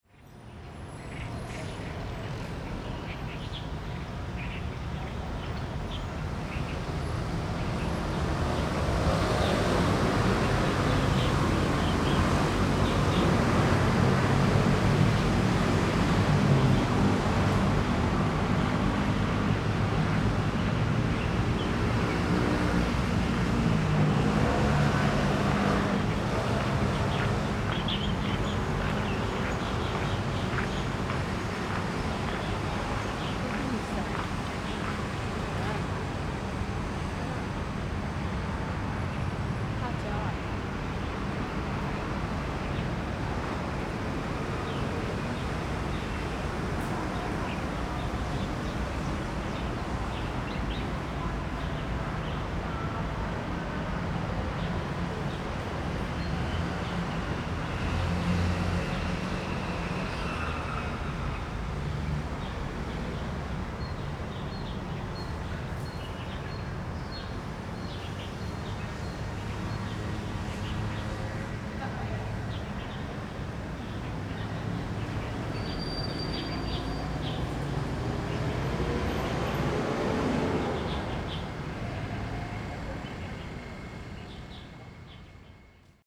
廣福公園, Tucheng Dist., New Taipei City - in the Park
in the Park, Traffic Sound, Birds singing
Zoom H4n +Rode NT4